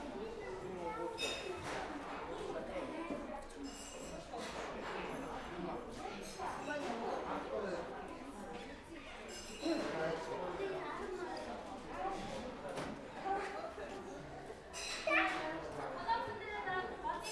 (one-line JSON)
{"title": "National amusement park, Ulaanbaatar, Mongolei - ape game", "date": "2013-06-01 15:02:00", "description": "a game in a hall where by shooting toy apes rise on coluums\nchildren's day, opening of the amusement parc", "latitude": "47.91", "longitude": "106.92", "altitude": "1292", "timezone": "Asia/Ulaanbaatar"}